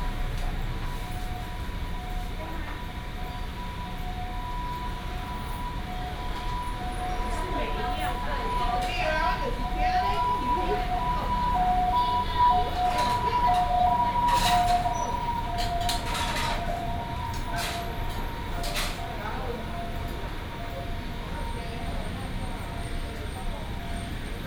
嘉義第一名火雞肉飯, 前金區Kaohsiung City - Turkey rice restaurant
Turkey rice restaurant, Traffic sound, birds sound
Binaural recordings, Sony PCM D100+ Soundman OKM II